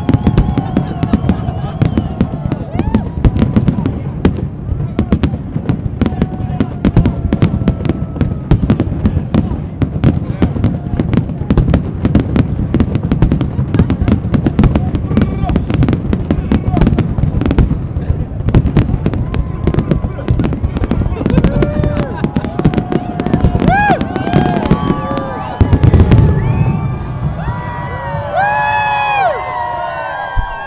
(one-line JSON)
{"title": "london, 2008, NYE fireworks and countdown with Big Ben, invisisci", "latitude": "51.51", "longitude": "-0.12", "altitude": "14", "timezone": "GMT+1"}